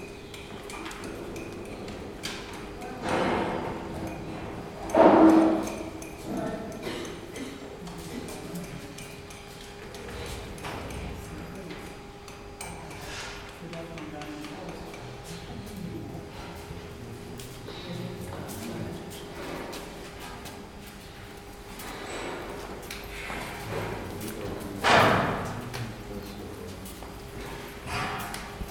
Trèves, Allemagne - men at work in a cloister
Rheinland-Pfalz, Deutschland